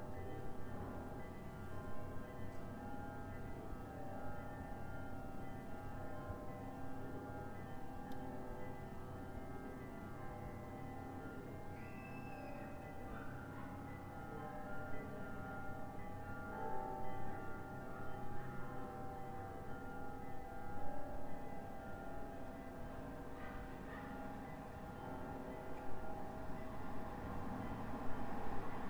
ул. Красная, Краснодар, Краснодарский край, Россия - ringing of church bells at Orthodox military cathedral of St. Alexander Nevsky